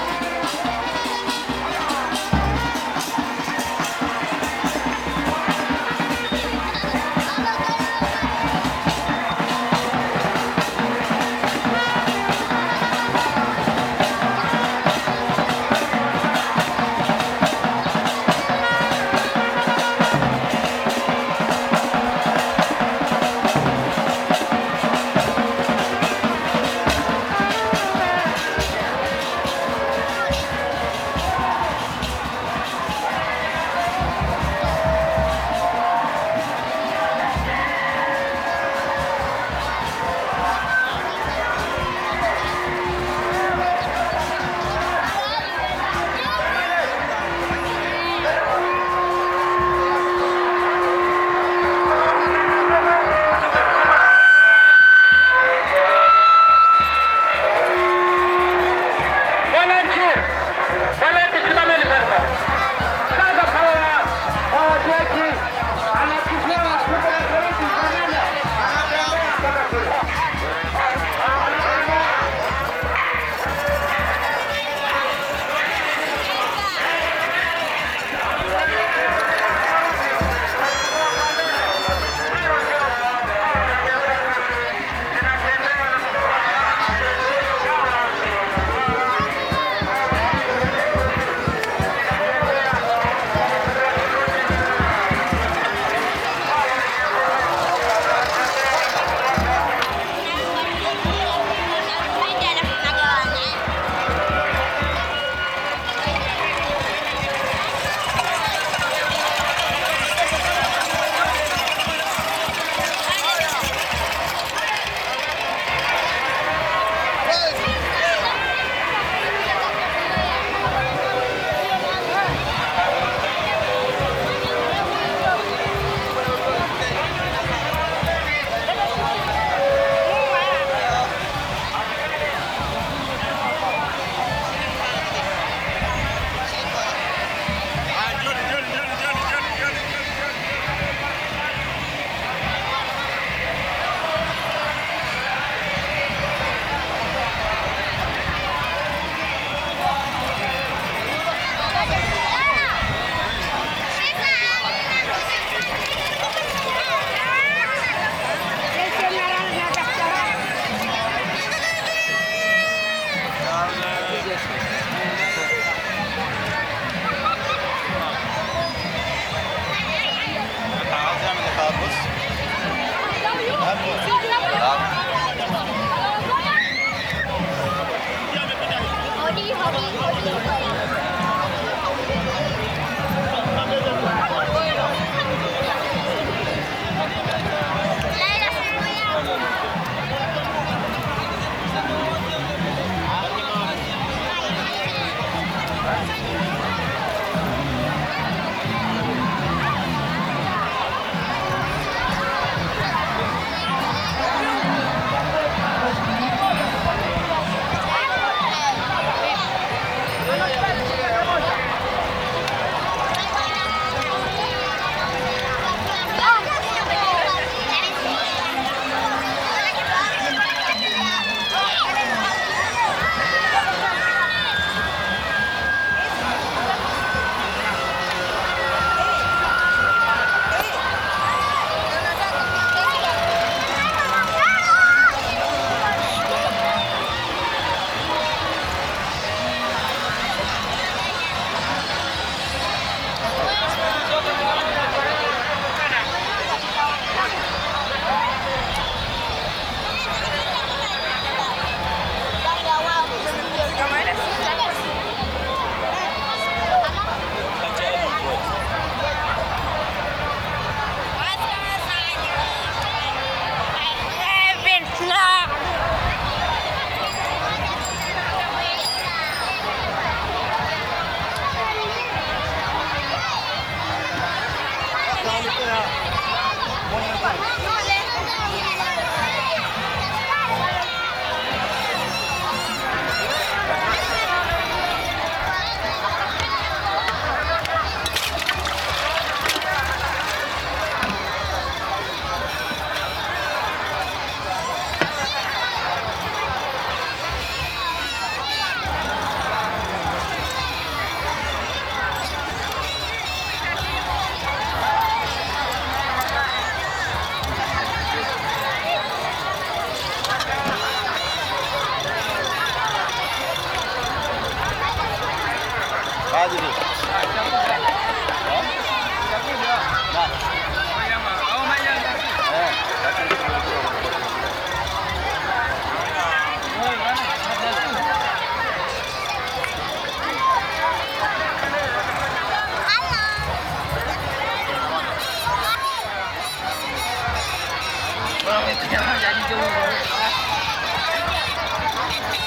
Mapinduzi Rd, Zanzibar, Tanzania - Zanzibar Funfair
Zanzibar Funfair. Roasted squid and lemonade are the dominant snacks.
Zanzibar زنجبار, Tanzania, 7 June